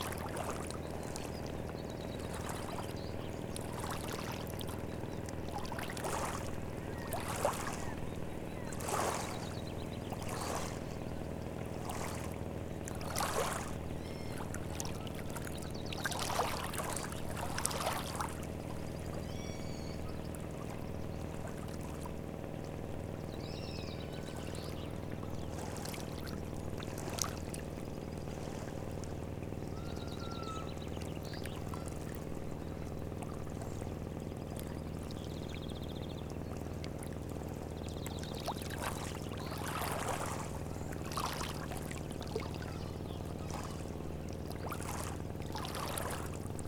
Croatia, Simuni Beach - Simuni Beach
deserted beach on a sunny windless morning. as the water gently touches the shoreline, a ship passes by at a 400 meter distance. WLD
Island Of Pag, 8 June